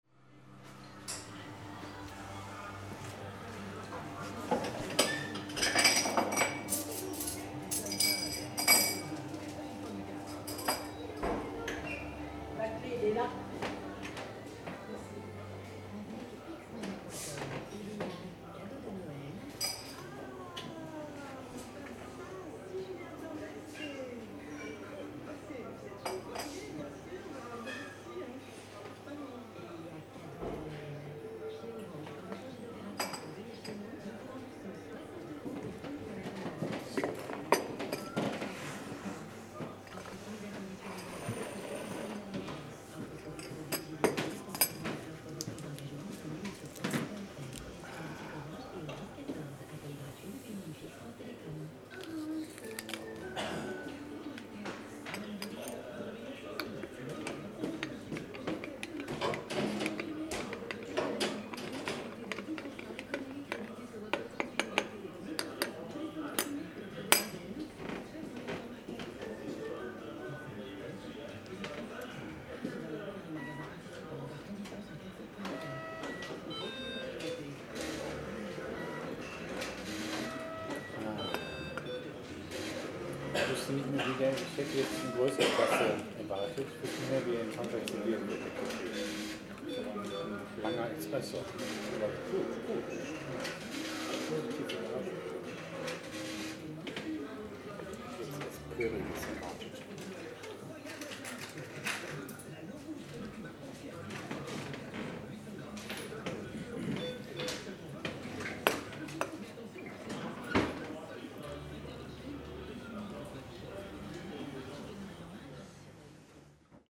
A medieval town giving shelter to bypassers seeking a decent coffee. The quality of the coffee found is highly above their expectations.